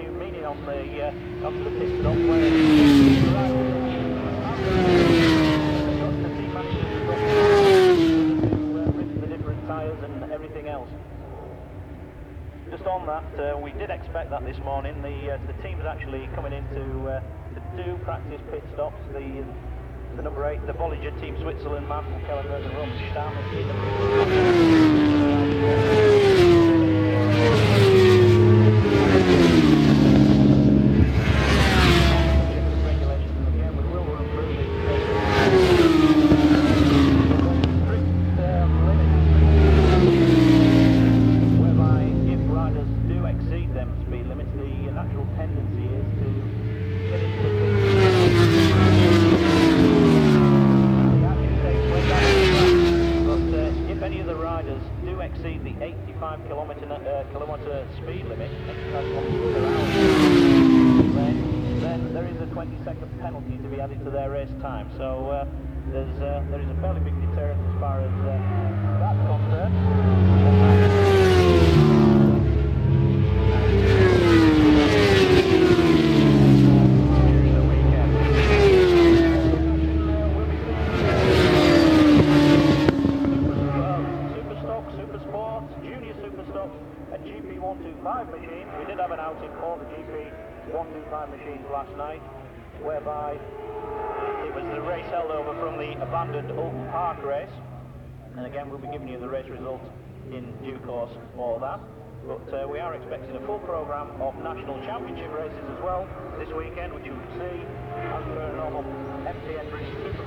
Silverstone Circuit, Towcester, United Kingdom - world endurance championship 2002 ... practice ...
fim world endurance championship 2002 ... practice ... one point stereo mic to minidisk ...
May 2002